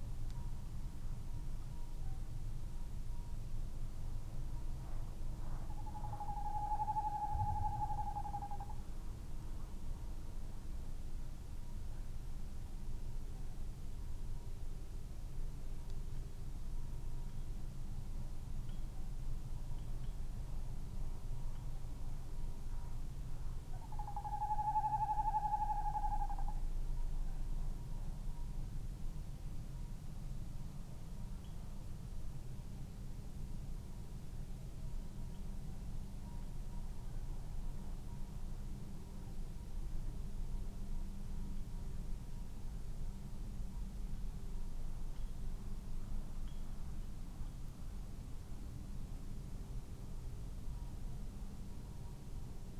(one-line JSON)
{"title": "Unnamed Road, Malton, UK - tawny owls ...", "date": "2019-05-15 22:52:00", "description": "Tawny owls ... male territorial song ... later ... tremulous hoot call ... SASS ...", "latitude": "54.12", "longitude": "-0.54", "altitude": "75", "timezone": "Europe/London"}